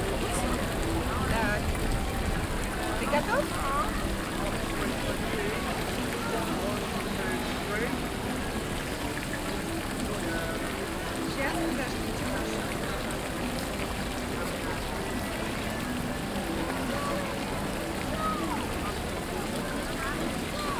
El Barri Gòtic, Barcelona, Barcelona, España - Fountain at Plaça Reial
Water recording made during World Listening Day.
Barcelona, Spain, 18 July 2015, 14:07